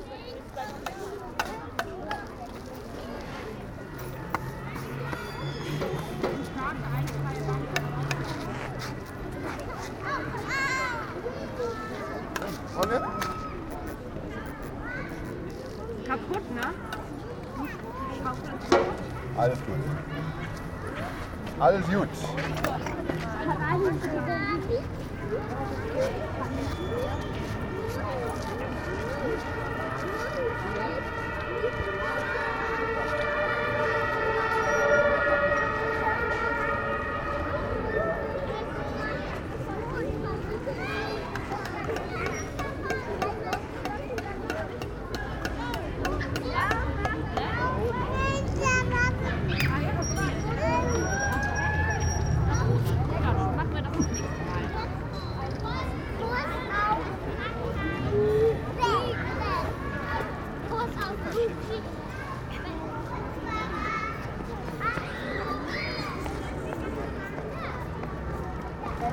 Hamburg, Deutschland - Children playing
Annenstraße & Paulinenplatz. Children playing in a park, with the parents.
Hamburg, Germany, 19 April 2019, 17:00